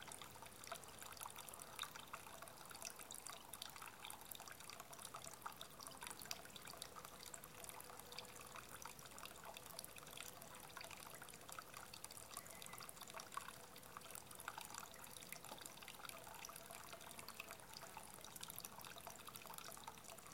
{"title": "In the Provence", "date": "2011-09-20 14:00:00", "description": "Military plane flying over the countryside in Provence.", "latitude": "43.78", "longitude": "5.39", "altitude": "299", "timezone": "Europe/Paris"}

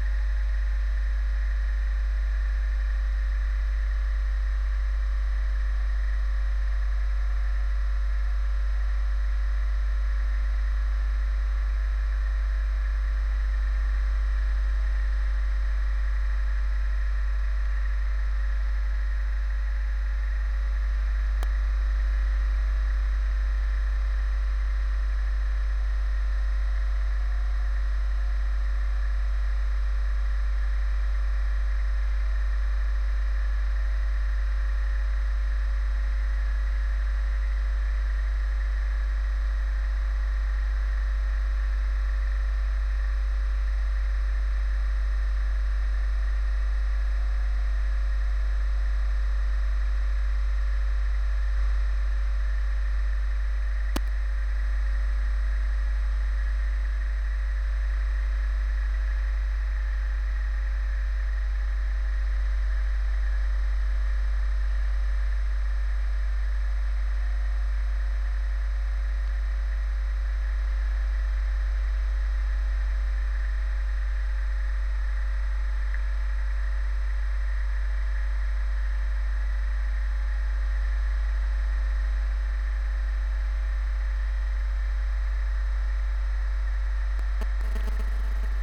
{"title": "Ems Brücke, Lingen (Ems), Deutschland - Underwater industry", "date": "2022-10-02 14:05:00", "description": "Annoying sound from underwater... I dropped the hydrophone in the river Ems, right in front of a facility that takes water from the river and transports it to the nearby nuclear power station for its cooling system. I wonder what the constant sound does to the fish in the river. At the end of the recording, there are strange distortion sounds. Don't know what it could be.", "latitude": "52.47", "longitude": "7.31", "altitude": "23", "timezone": "Europe/Berlin"}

